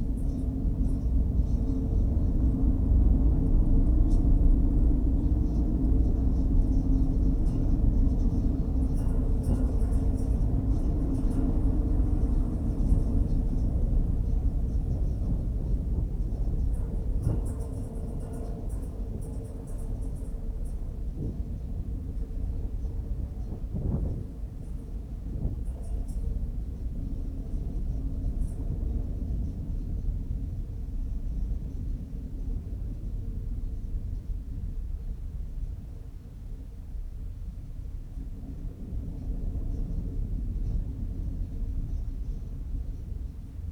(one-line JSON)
{"title": "Lokvica, Miren, Slovenia - Electric tower in the wind with contact microphone.", "date": "2020-12-27 11:02:00", "description": "Electric tower in the wind with contact microphone.\nRecorded with MixPre II and AKG C411, 60Hz HPF, denoise.", "latitude": "45.88", "longitude": "13.60", "altitude": "230", "timezone": "Europe/Ljubljana"}